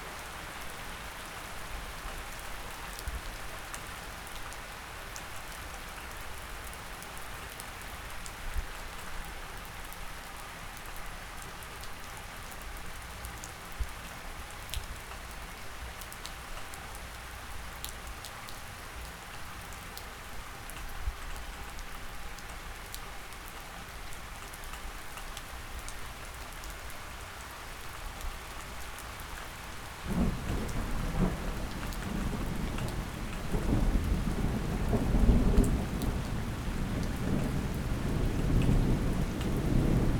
Poznan, Mateckiego Str, under balcony - fluctuating storm
recording under one of the balconies. rain scourging at different strength, various splashes, dripping, gushing. intense strom